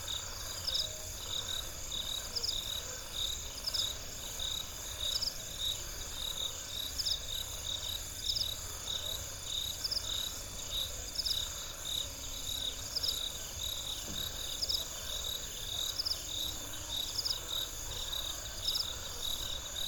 Chikuni Mission, Monze, Zambia - night sounds...
…night sounds near Chikuni Mission…